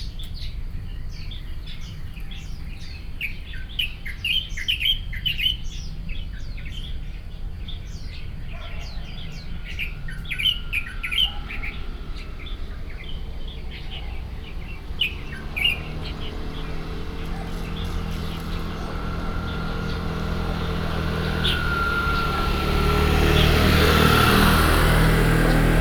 關渡防潮堤, Beitou District - birds
Taipei City, Beitou District, 關渡防潮堤, 8 November 2012, 06:31